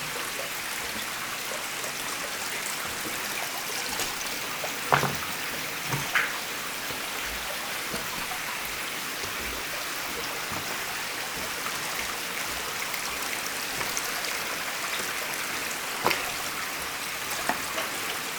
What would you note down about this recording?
This is the very big inclined tunnel leading to the center of the underground quarry. I'm quietly walking, climbing the shaft. A lot of water flows everywhere.